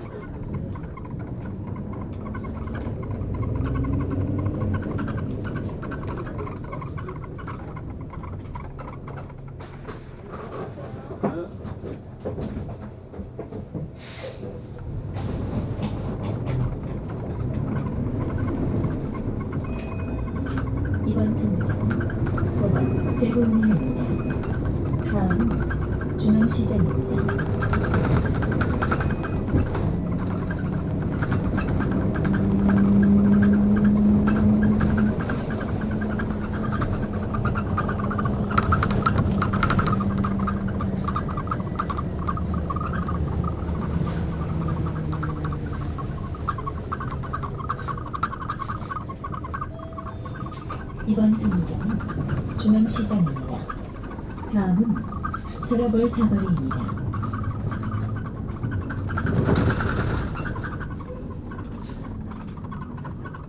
Gyeongju-si, South Korea - Bus ride
Public bus ride in Gyeongju City
6 October 2016, 14:30